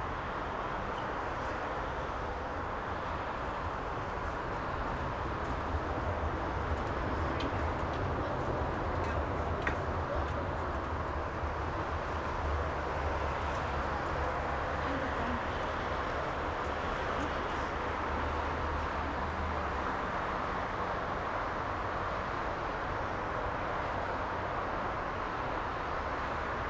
Landstraße, Vienna, Austria - Street
EM 172 Binaural
Wien, Austria, 21 January 2017, ~17:00